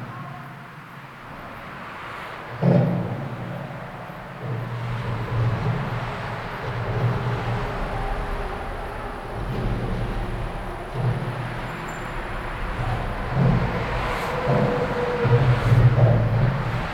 Under the motorway, Hamm, Germany - Freeway Drums...
… a bridge under the A1 motorway over the “Datteln-Hamm-Kanal”. I’ve often stopped over just for listening… and made dozens of recordings here; some of the recordings were woven together as the “bass-line” and eighth monologic “narrator” in the radio piece FREEWAY MAINSTREAM broadcast by Studio Acoustic Arts WDR3 in 2012.
9 July 2011, ~14:00